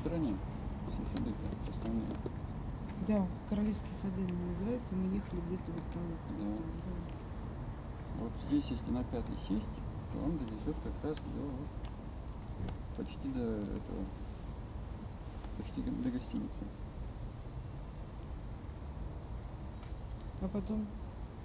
Praha, jewish cemetery
at the grave of Franz Kafka, russian scientists discuss about where they are and where to go.